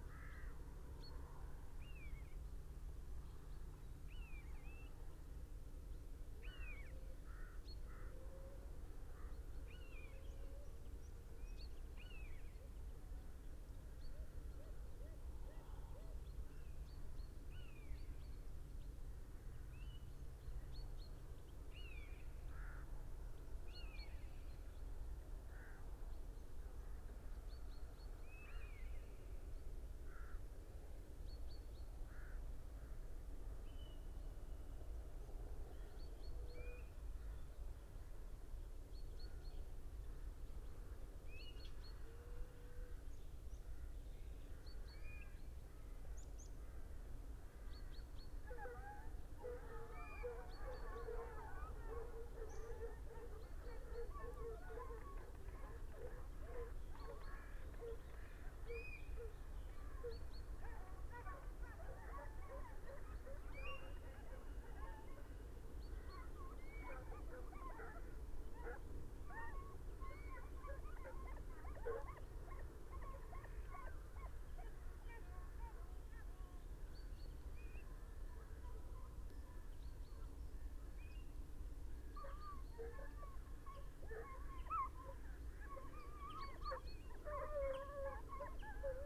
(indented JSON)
{
  "title": "urchins wood, ryedale district ... - horses and hounds ...",
  "date": "2019-09-29 07:39:00",
  "description": "horses and hounds ... don't know if this was fox hunting ... banned ... trail hunting ... drag hunting ..? opportunistic recording using a parabolic ... bird calls ... golden plover ... buzzard ... carrion crow ... red-legged partridge ... meadow pipit ... it has been mentioned that it also might be 'cubbing' ... integrating young dogs into the pack ...",
  "latitude": "54.12",
  "longitude": "-0.56",
  "altitude": "118",
  "timezone": "Europe/London"
}